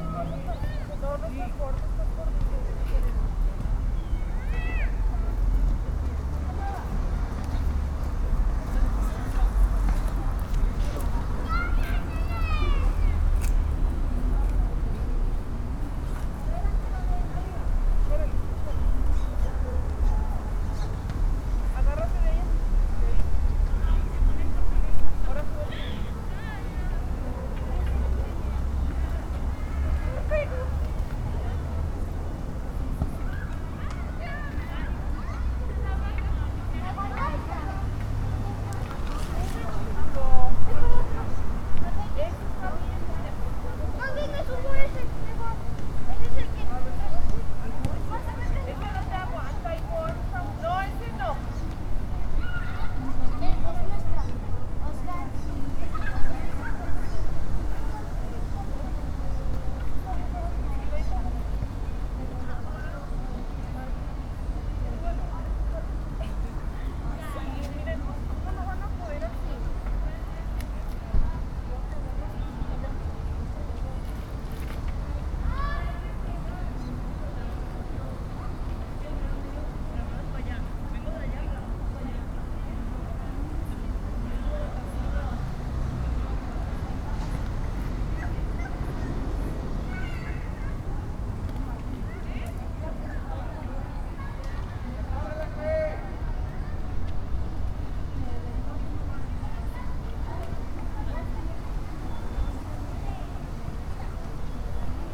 P.º de Los Quetzales, San Isidro, León, Gto., Mexico - A Wednesday afternoon at San Isidro Park.
I made this recording on August 25th, 2021, at 7:11 p.m.
I used a Tascam DR-05X with its built-in microphones and a Tascam WS-11 windshield.
Original Recording:
Type: Stereo
Un miércoles por la tarde en el Parque San Isidro.
Esta grabación la hice el 25 de agosto de 2021 a las 19:11 horas.